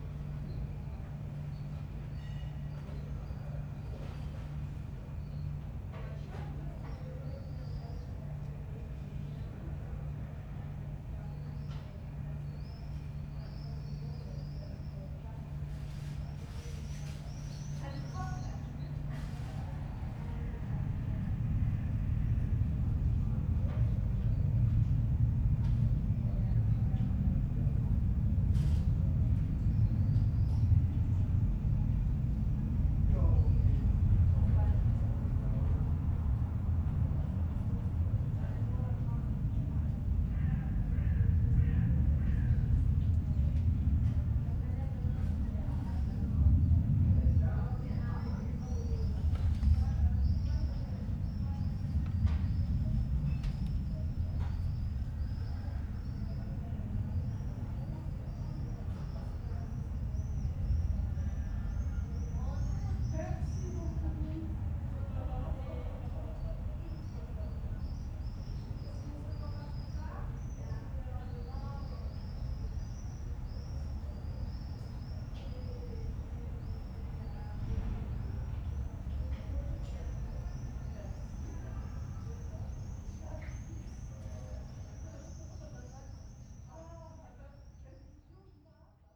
Berlin Bürknerstr., backyard window - evening ambience
world listening day, sounds lost and found: this place is already a personal sonic archive...
(SD702, MKH8020)
Berlin, Germany, 18 July 2016, ~22:00